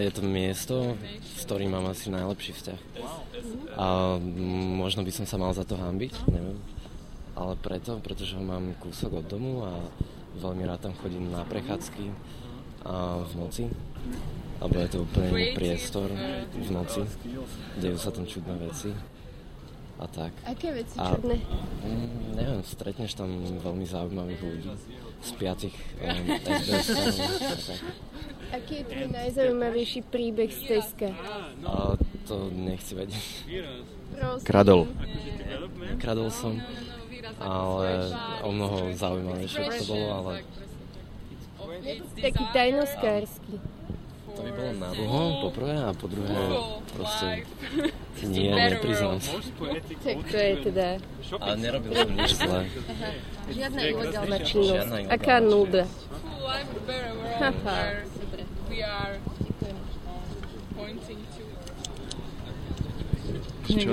abstract:
i heard you like tesco... why? :: it's not that i love it, but in this city it is the place to which i have the closest relation... maybe i should be ashamed of that... i love to come to this place during walks at night... cause there are quite strange things happening... :: which strange things? :: you can meet very interesting people here... :: which is your most exciting experience at tesco? :: you don't wanna know... :: he stole! :: i didn' t steal anything, but in fact it was much more interesting... i didn't do anything bad... :: not any illegal activity? how boring... :: look, somebody wrote down a phone number here :: on kamenne square? in front of tesco? this must be of some service related to the prior department store... :: rather yes :: so, should we call there? :: okay, let's call... :: maybe it was dictated by somebody or it' s even a message left for someone... :: 290 658 :: you think thats a five?
OD Tesco, Bratislava, Slovakia